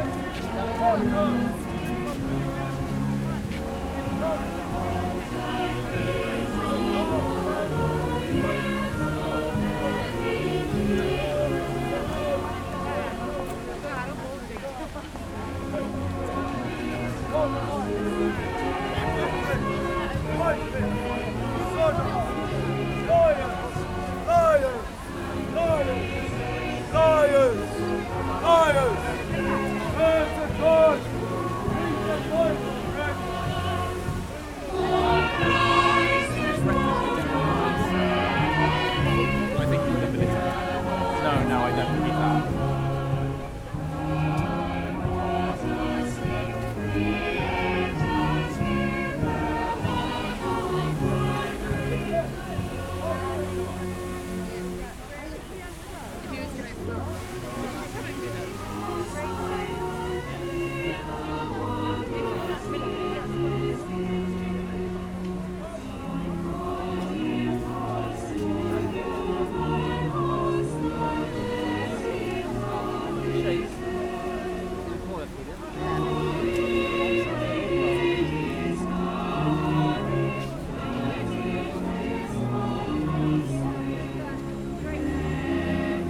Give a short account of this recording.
Christmas in Trafalgar Square, London 2012. The whole ceremony crashed by a man with a different opinion about the celebration going on, shouting out his messages to the crowd. First a civilian and the salvation army followed him around the square then a police women on a horse. In the end a police car came and he gave up. Recorded with a Zoom H4n.